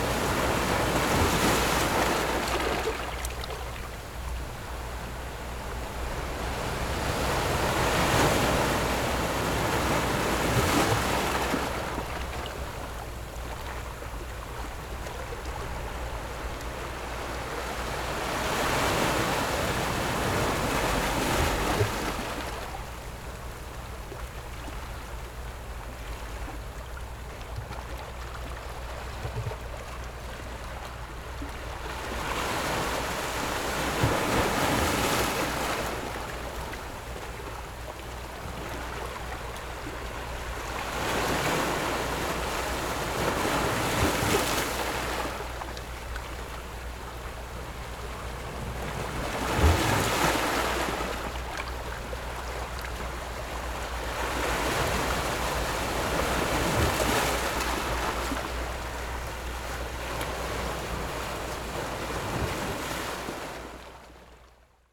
頭城鎮龜山里, Yilan County - Rocks and waves
Sitting on the rocks, Rocks and waves, Sound of the waves, Very hot weather, There are boats on the distant sea
Zoom H6+ Rode NT4
29 July 2014, ~16:00